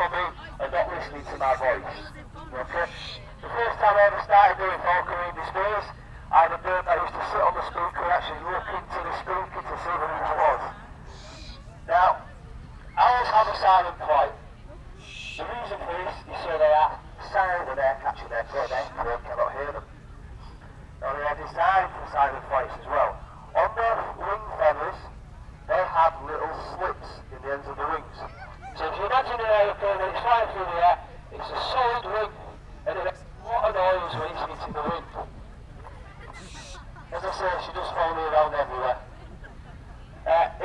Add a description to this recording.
Smudge the American barn owl ... falconer with radio mic through the PA system ... lavalier mics clipped to baseball cap ... warm sunny morning ...